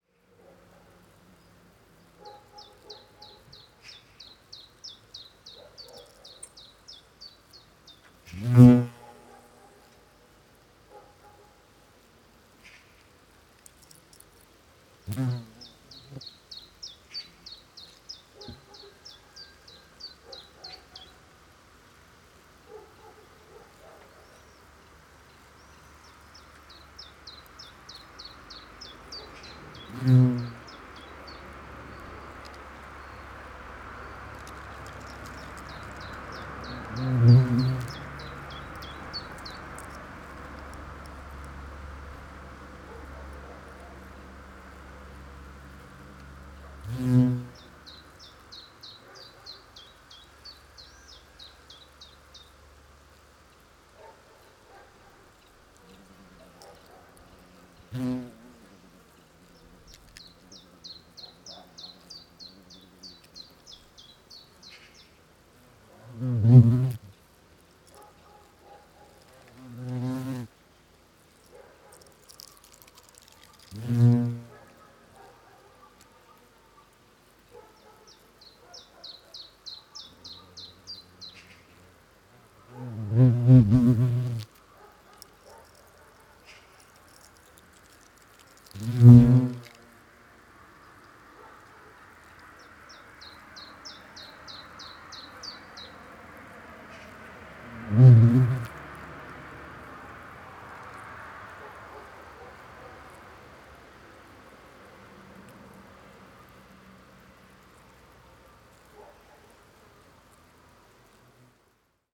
{
  "title": "wasps building a nest, south Estonia",
  "date": "2011-07-19 13:01:00",
  "description": "large wasps building a nest in a garage wall",
  "latitude": "58.21",
  "longitude": "27.07",
  "altitude": "47",
  "timezone": "Europe/Tallinn"
}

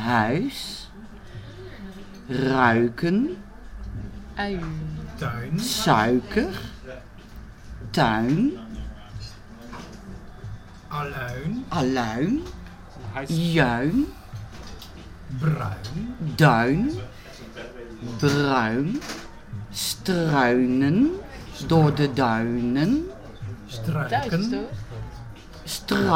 {
  "title": "tilburg, heuvel, in a cafe, dutch spelling and pronounciation",
  "date": "2009-06-22 12:45:00",
  "description": "in a cafe - demonstration of the dutch vowel EU\ninternational soundmap : social ambiences/ listen to the people in & outdoor topographic field recordings",
  "latitude": "51.56",
  "longitude": "5.09",
  "altitude": "20",
  "timezone": "Europe/Berlin"
}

